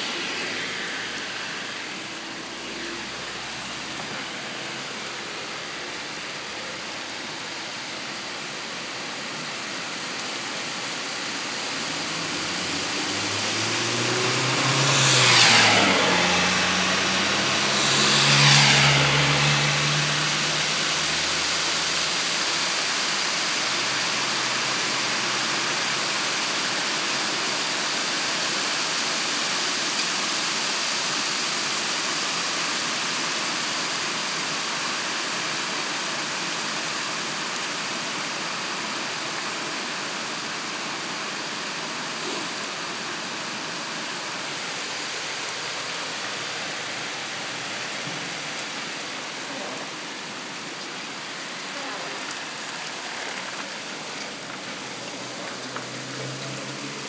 Wait/Listen #71 (13.05.2014/14:01/Viandener Straße/Sinspelt/Germany)